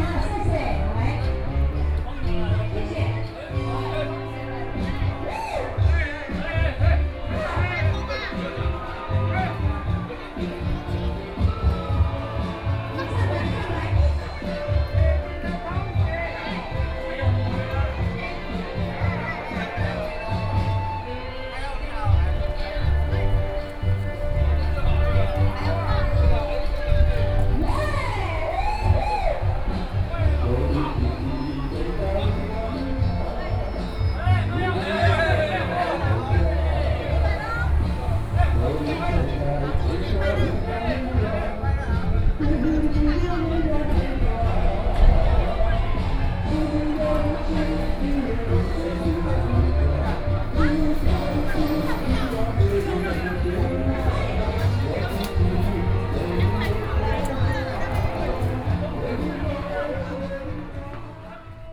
Wedding Banquet, The weather is very hot